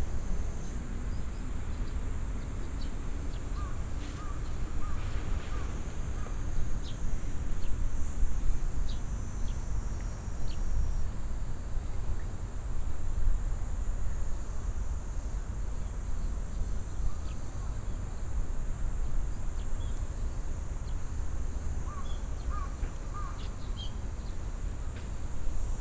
tokyo - kiyosumishirakawa garden - lake
a the gardens lake - big fishes and turtles waiting for food at the visitors feed and bubble in the water- background: cicades, digital photo beeps and a political announcement from the main street - unfortunately some wind
intrnational city maps - social ambiences and topographic field recordings